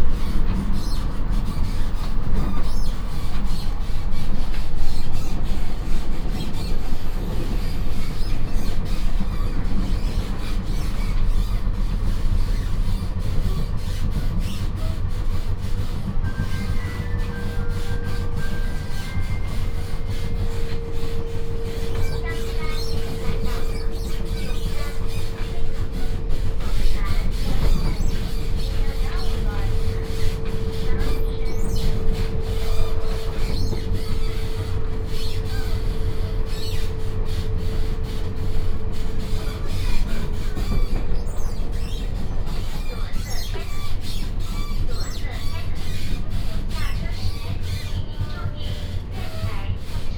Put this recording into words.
from Sanxingqiao Station to Xiangshan Station, Union train compartment